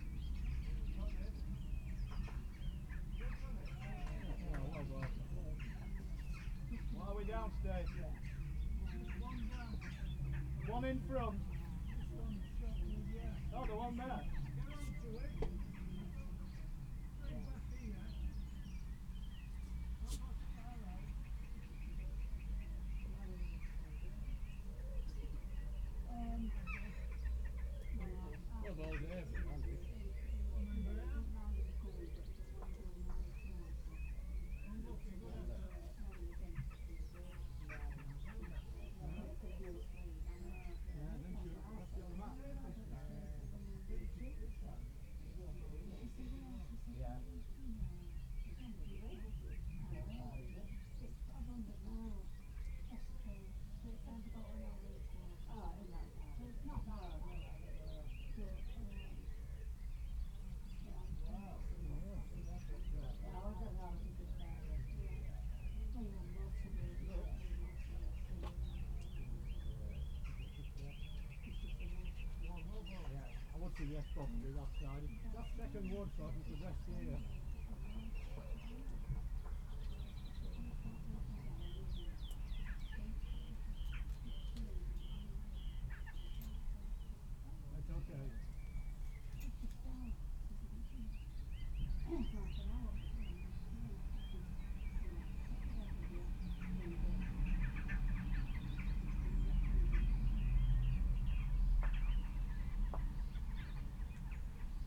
Bridlington Rd, Driffield, UK - sledmere v walkington bowls match ...
sledmere v walkington veterans bowls match ... recorded from the shed ... open lavalier mics clipped to a sandwich box ... initially it was raining ... 13 minutes in and someone uses the plumbing ...